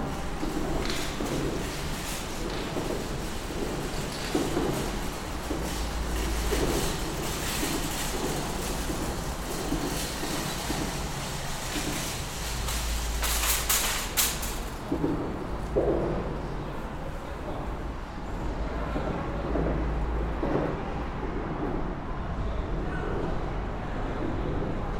Sounds of various supermarket shopping carts under Riverside Drive Viaduct.
Zoom H6
New York County, New York, United States of America, 10 November 2019, 13:00